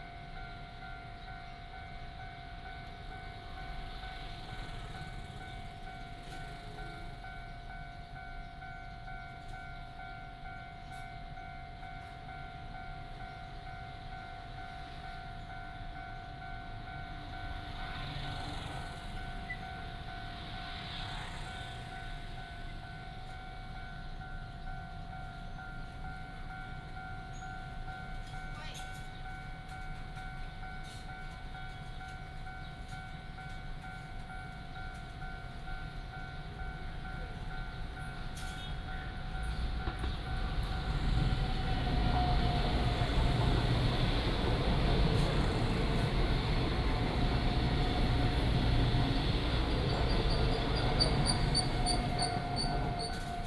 In the railway level road, Traffic sound, Train traveling through
31 January, ~14:00